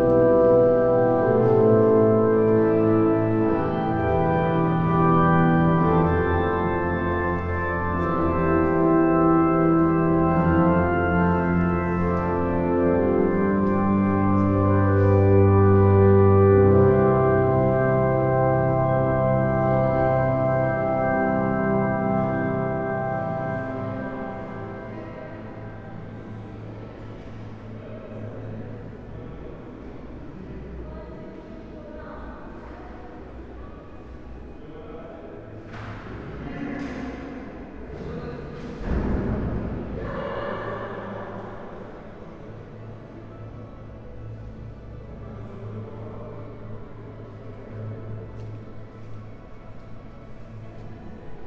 Saint Euphemia, basilica, Rovinj - after wedding
walk inside, basilica ambience, there is a nice echoing moment from outside to the inside - sounds of the crowd ...